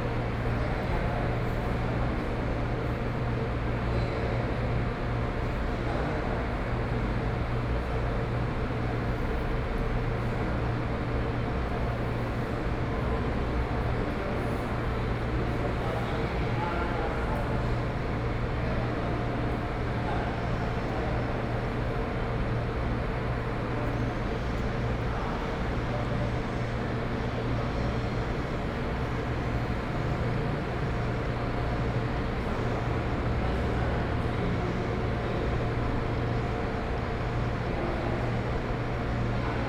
Su'ao Station, Taiwan - in the station hall
Sitting in the station hall, Ceilinged space station, When passengers rarely, Zoom H4n+ Soundman OKM II
Yilan County, Taiwan, 7 November